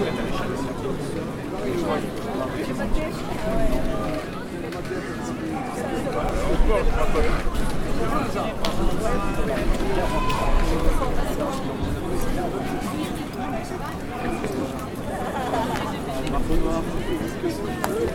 Saint - Aubin - Dupuy, Toulouse, France - Saint Aubin outdoor Market, on sunday.
Outdoor maket of Saint Aubin on sunday is a real good place to listen and enjoy. Enjoy the girl who is shouting "Le journal la feuille" ("the newspaper called "la feuille", "The leaf")...a fake newpapers. Many people are walking and looking for something to buy or to eat... Food, jewellery, clothes, and some artistic objets are there. It's a just a pleasure for listeners and walkers...